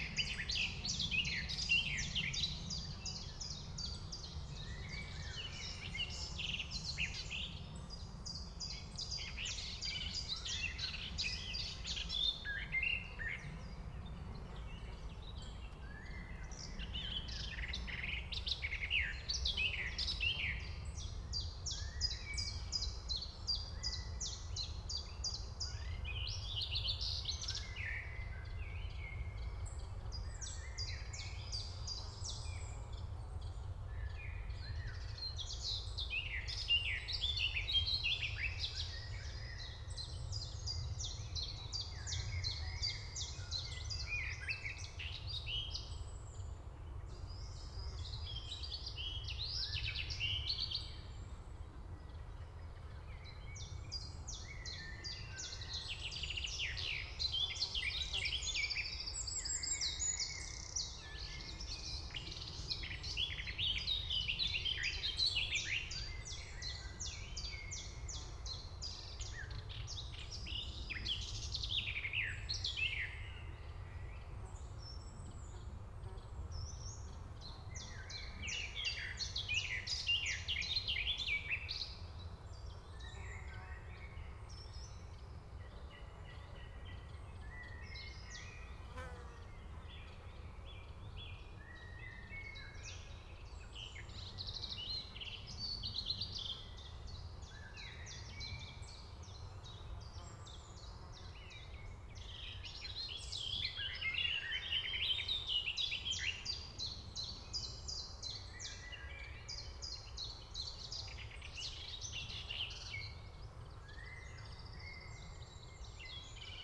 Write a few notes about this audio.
Recorded in a lovely mature wood at the bottom of a valley forming a natural 'amphitheatre' with a small road running left to right behind the mic array. Wrens, Garden Warblers, Blackcaps, Chiffchaffs, flies, aeroplanes, cars, Raven, Chaffinch, Song Thrush, Blackbird, sheep, more flies, Carrion Crows all with a slight echo due to the geography.Sony M10 with custom made set-up of Primo capsules.